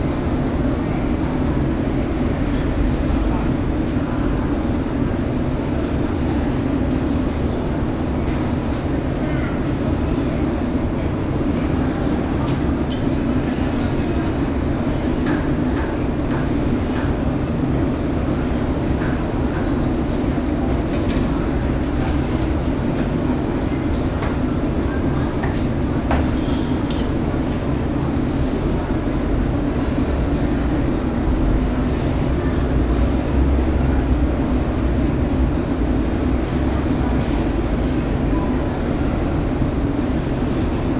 Kallang, Singapur, Lavender rd. - drone log 15/02/2013 lavender food square
food night marked, ventilation and atmosphere
(zoom h2, binaural)
Singapore